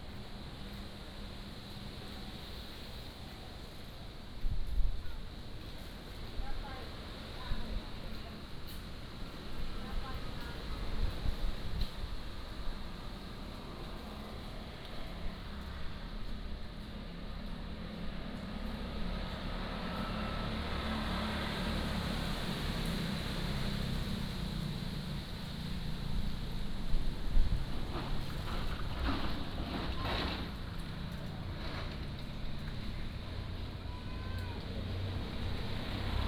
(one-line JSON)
{"title": "通梁古榕, Baisha Township - Below the ancient banyan", "date": "2014-10-22 16:32:00", "description": "In front of the temple, Below the ancient banyan, Wind, Traffic Sound", "latitude": "23.66", "longitude": "119.56", "altitude": "11", "timezone": "Asia/Taipei"}